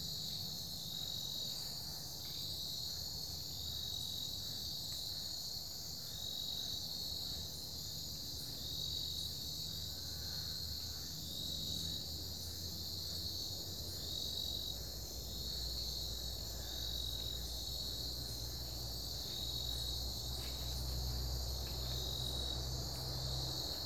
Cape Tribulation, QLD, Australia - night in the mangroves of cape tribulation
i was shown to this location by my hosts at cape trib farmstay, sam, jordan and andrew, on my last night in cape tribulation as this area was privately owned by them. while i wanted to record further away from cape trib they strongly suggested this area and since i could feel they didn't feel like driving further away i thought i would give the place a go. the recording ended up being a bit of a dissapointment for me as you could still hear the road very clearly as well as the drones of the generators from the town. fortunately when jordan and i went to pick up the microphones a few hours later he felt like driving to the marrdja mangroves about 20 minutes drive away where i originally wanted to record and i ended up getting a fantastic recording there at one in the morning! i still do like this recording though.
recorded with an AT BP4025 into an Olympus LS-100.